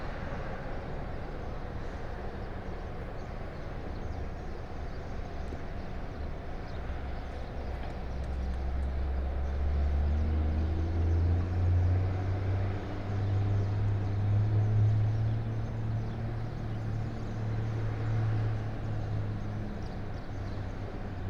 Ta'Zuta quarry, Dingli, Siġġiewi, Malta - quarry ambience
Ta'Zuta quarry, operates a ready mixed concrete batching plant and a hot asphalt batching plant, ambience from above
(SD702, DPA4060)